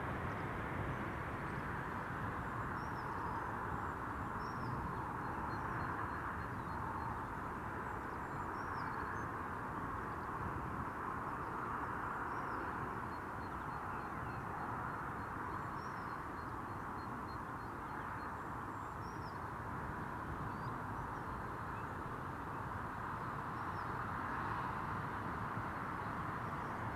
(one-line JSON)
{"title": "Contención Island Day 62 outer southwest - Walking to the sounds of Contención Island Day 62 Sunday March 7th", "date": "2021-03-07 07:44:00", "description": "The Drive Moor Place Woodlands Woodlands Avenue Westfield Grandstand Road\nDown the hill\ndown the wind\ncomes the traffic noise\nFrom nowhere\ngolden plover lift\na skylark\nand then the merlin", "latitude": "54.99", "longitude": "-1.62", "altitude": "71", "timezone": "Europe/London"}